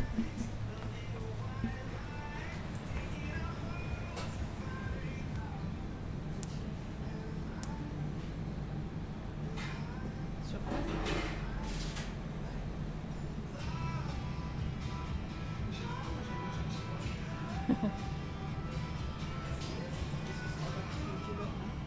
McDonalds Colomiers Pyrénées 2 allées des Pyrénées 31770 Colomiers, France - Commande chez Mcdo
4 amies qui font leur pause à Mcdo. Le bruit des friteuses, des machines. Un livreur arrive. Le bip du sans contact, du ticket qui édite. Le zip du sac à main. Le froissement des tickets. Les employés mac do, les clients. Une folle envie d'aller aux toilettes.